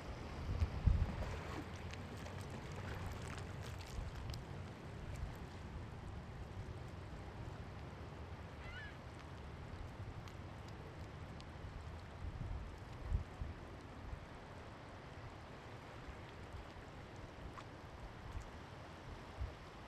Berkeley - Strawberry creek / Bay
sound of creek mixing with waves of San Francisco Bay.. As much as I have got excited about discovery of crawfish in a creek at the campus, I have got equally sad about how much the place where Strawberry creek meets a SF Bay is polluted. Once marsh with willow patch and shellmound, now concrete tube with enourmes amount of plastic debris all over.. Efforts are made to clean and restore this area, lets hope and thank to anyone who helps with it
California, United States of America